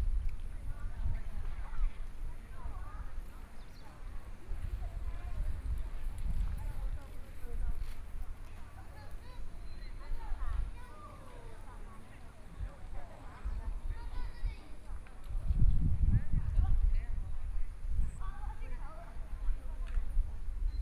都歷遊客中心, Chenggong Township - in the Visitor Center
in the Visitor Center, Tourists
Chenggong Township, Taitung County, Taiwan, 6 September 2014